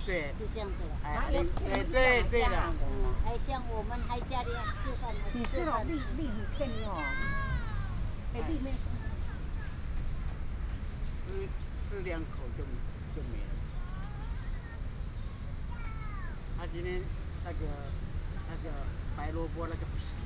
空軍十九村, Hsinchu City - in the park
in the park, Childrens play area, Several elderly people are chatting, Binaural recordings, Sony PCM D100+ Soundman OKM II
Hsinchu City, Taiwan, 19 September 2017, ~18:00